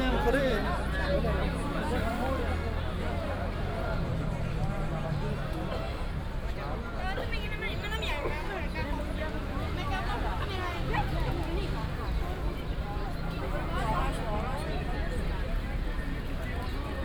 Victoria square, Athen - walking on the square
walking over Victoria square. The place is quite populated, mainly by refugees, who used to have their tents here recently. Passing a corner where food is distributed to people, and kids are sitting around drawing pictures on paper.
(Sony PCM D50, OKM2)
Athina, Greece, 7 April 2016